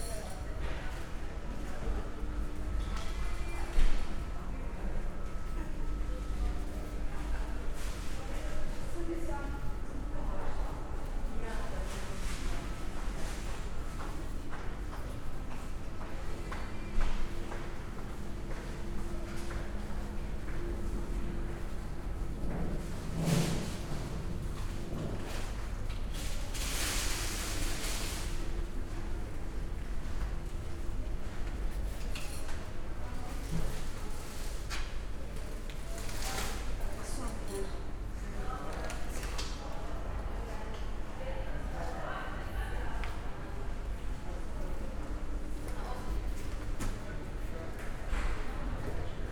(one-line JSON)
{"title": "UKC Maribor, hospital, entrance area - strolling around", "date": "2012-08-01 12:30:00", "description": "Univerzitetni klinični center Maribor, walking around in the hospital\n(SD702 DPA4060)", "latitude": "46.55", "longitude": "15.65", "altitude": "279", "timezone": "Europe/Ljubljana"}